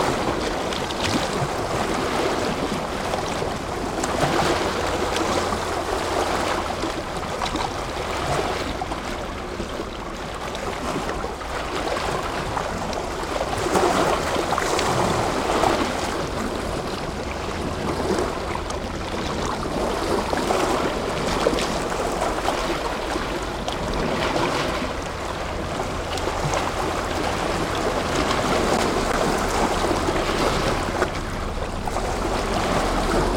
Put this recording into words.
Waves crashing against a rocky shoreline at Lake Biwa north of Chomeiji.